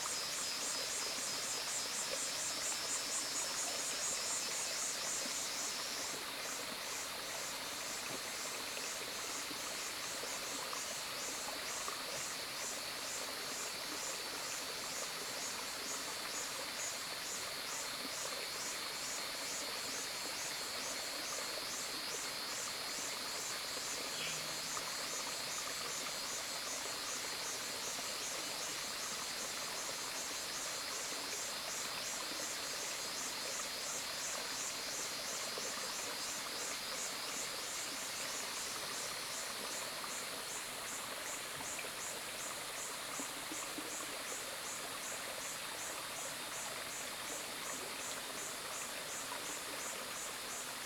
Hualong Ln., 埔里鎮成功里 - Headwaters of the river
Cicada sounds, Bird sounds, stream, Headwaters of the river
Zoom H2n MS+XY
Puli Township, 華龍巷, 8 June 2016